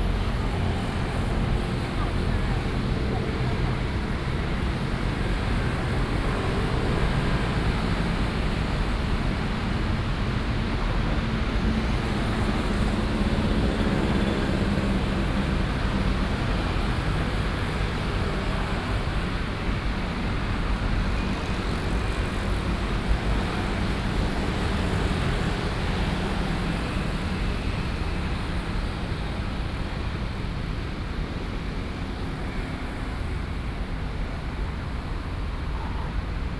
昌隆公園, Civic Boulevard - In the entrance to the park
In the entrance to the park, Traffic Sound, Facing the road
Taipei City, Taiwan, 27 June